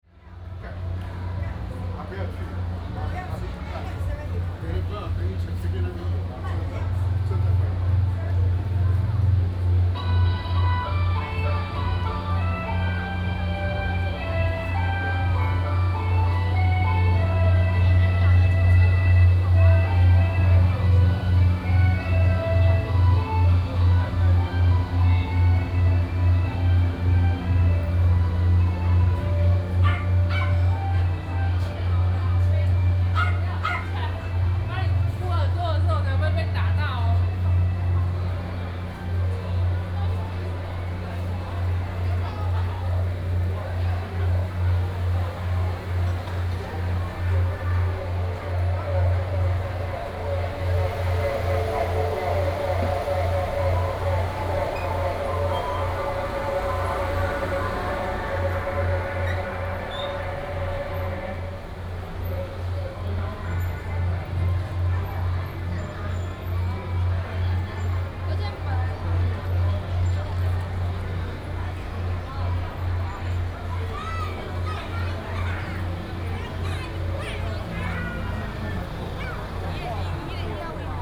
Xiao 2nd Rd., Ren’ai Dist., Keelung City - Traditional and modern shows
Noise Generator, Walking on the road, Traditional and modern variety shows, Keelung Mid.Summer Ghost Festival